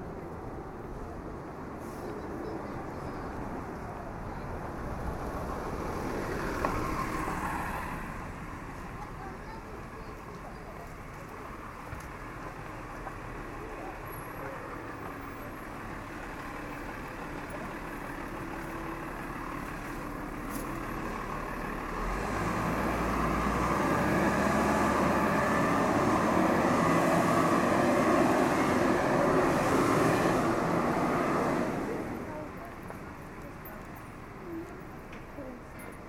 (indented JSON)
{
  "title": "Oxford, Oxfordshire, Reino Unido - Soundwalk towards Bonn Square",
  "date": "2014-08-04 14:21:00",
  "latitude": "51.75",
  "longitude": "-1.26",
  "altitude": "73",
  "timezone": "Europe/London"
}